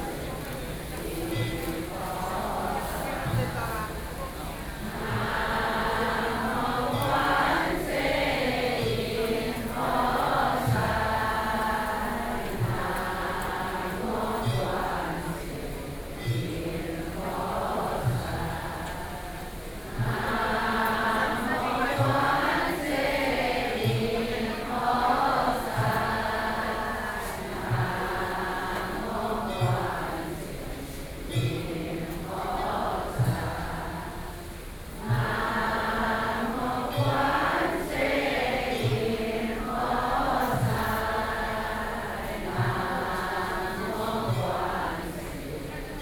Mengjia Longshan Temple, Taipei City - Chant Buddhist scriptures
Taipei City, Taiwan, 3 November 2012